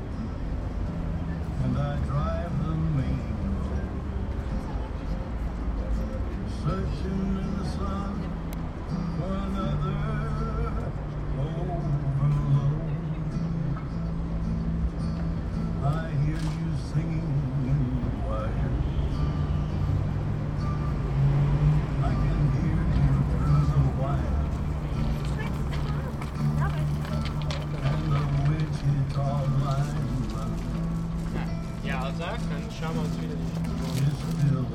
Rosenthaler Platz, Kiosk - by Deddy
i'm passing this place quite often on my way from and to L. there are some of these cheap food booths left hand, one of them, called By Deddy, always has a speaker on the counter, and almost always plays Johnny Cash. here's one of Cashs biggest fans, and his songs, the voice of people passing by and the street car's noise has become my soundtrack of this place.
sent at 17.02.2008 18:06